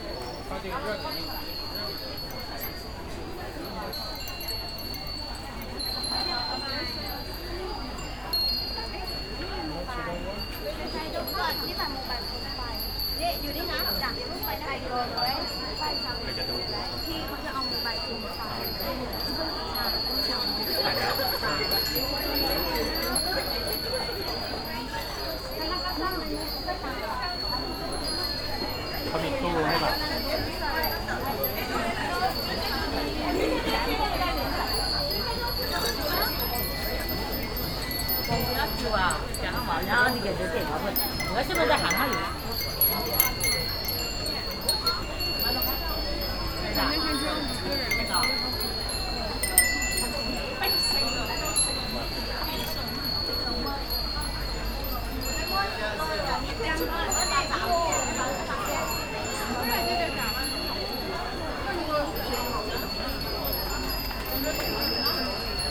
tokyo, asakusa, stores, wind bells
a large scale of small stores leading to the asakusa temple - a t one store an ensemble of wind bells
international city scapes - social ambiences and topographic field recordings